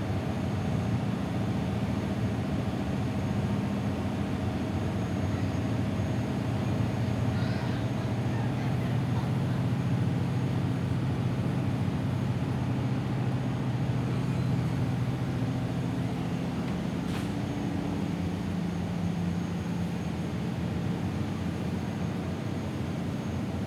Toronto Division, ON, Canada - Ferry to Toronto Islands
Recorded on the Sam McBride ferry to Toronto Islands, leaving mainland ferry terminal and arriving at Centre Island terminal.
Ontario, Canada